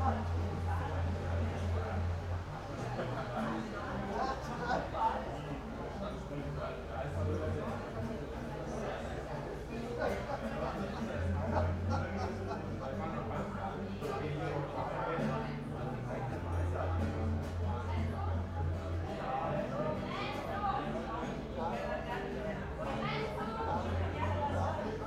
Berlin Bürknerstr., backyard window - party, wind in tree
party in the neighbourhood, wind in the tree in front of my window